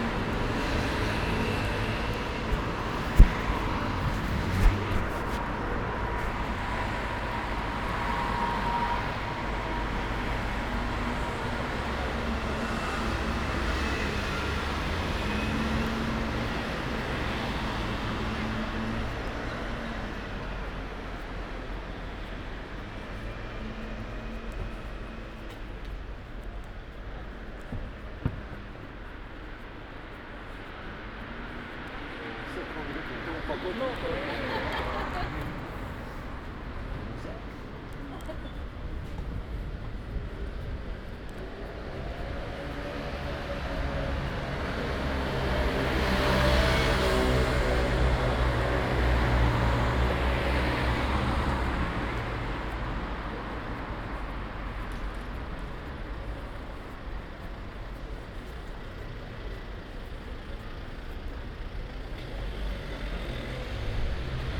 Paris soundwalks in the time of COVID-19 - Wednesday night metro and soundwalk in Paris in the time of COVID19: Soundwalk
Wednesday, October 14th 2020: Paris is scarlett zone fore COVID-19 pandemic.
One way trip back riding the metro form Odeon to Gare du Nord and walking to airbnb flat. This evening was announced the COVID-19 curfew (9 p.m.- 6 a.m.) starting form Saturday October 17 at midnight.
Start at 9:57 p.m. end at 10:36 p.m. duration 38’45”
As binaural recording is suggested headphones listening.
Both paths are associated with synchronized GPS track recorded in the (kmz, kml, gpx) files downloadable here:
For same set of recording go to: